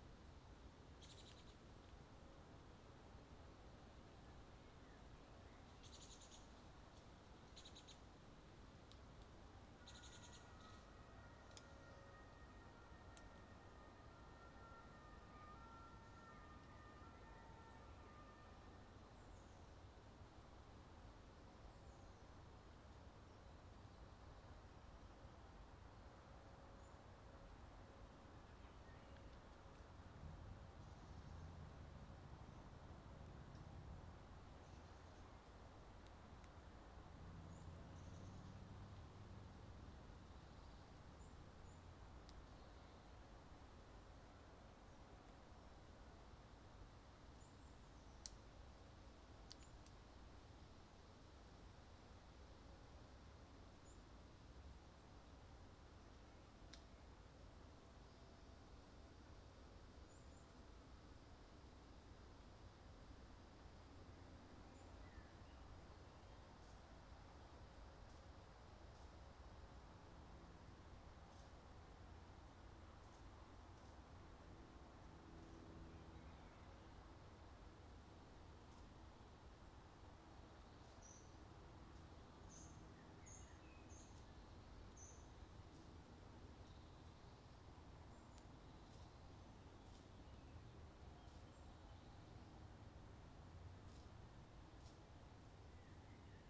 {"title": "Park Sorghvliet, Den Haag, Nederland - Park Sorghvliet (2/2)", "date": "2014-06-26 15:30:00", "description": "Binaural recording in Park Sorghvliet, The Hague. A park with a wall around it. But city sounds still come trough.", "latitude": "52.09", "longitude": "4.29", "altitude": "13", "timezone": "Europe/Amsterdam"}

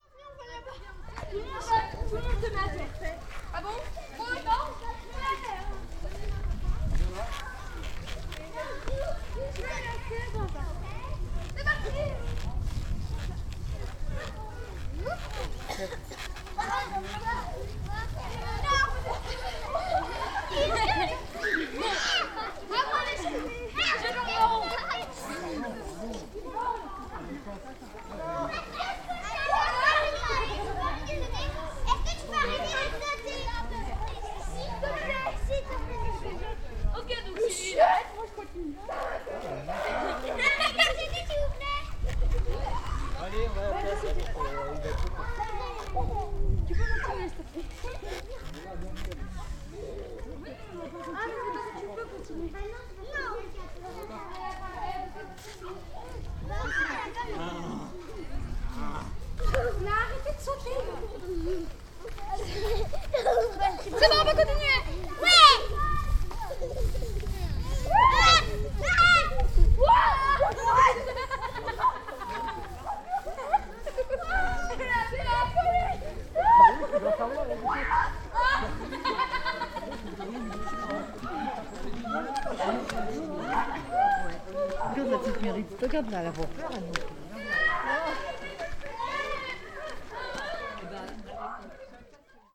{"title": "Groix, France - kids in nests playing", "date": "2015-08-06 16:30:00", "description": "kids are having fun running and jumping in giant safety nets hanging from the tall pine trees in the recreation park 'parc a bout' on the isle of Groix. Walking under the trees playing, with the microphone. parents are making comments and you can also hear the creeking sound of the wires holding the nets.", "latitude": "47.63", "longitude": "-3.45", "altitude": "40", "timezone": "Europe/Paris"}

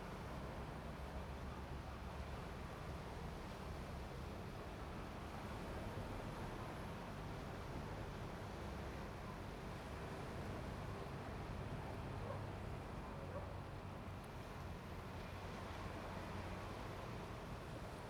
{"title": "南寮村, Lüdao Township - sound of the waves", "date": "2014-10-30 19:02:00", "description": "sound of the waves, Traffic Sound, Dogs barking\nZoom H2n MS +XY", "latitude": "22.67", "longitude": "121.47", "altitude": "7", "timezone": "Asia/Taipei"}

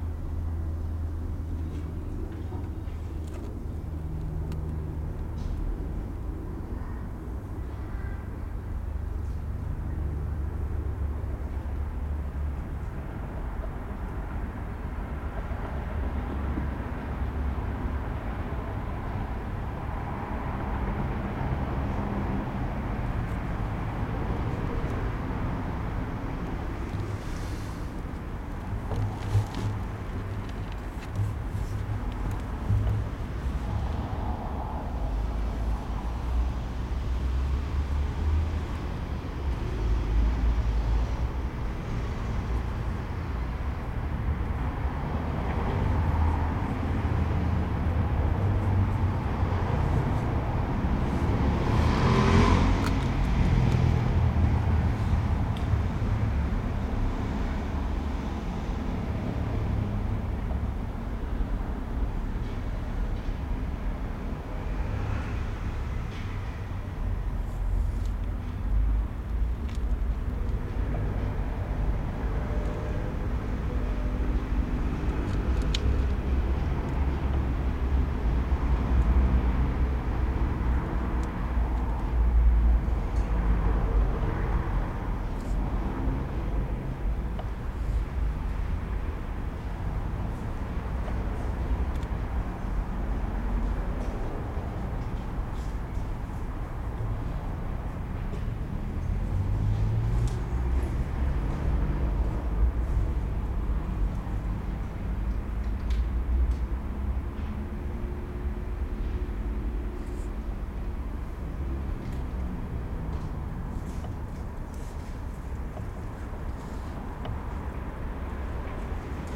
{"title": "leipzig, karl-heine-platz, auf einer bank unter bäumen.", "date": "2011-08-31 20:10:00", "description": "auf einer bank im park, stille, die straße von ferne.", "latitude": "51.33", "longitude": "12.34", "altitude": "119", "timezone": "Europe/Berlin"}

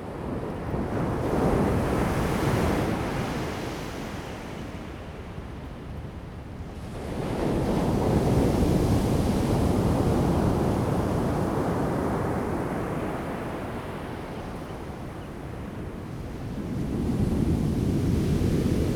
{"title": "太麻里海岸, Taimali Township, Taiwan - Sound of the waves", "date": "2018-04-03 17:02:00", "description": "At the beach, Sound of the waves, birds sound\nZoom H2n MS+XY", "latitude": "22.61", "longitude": "121.01", "timezone": "Asia/Taipei"}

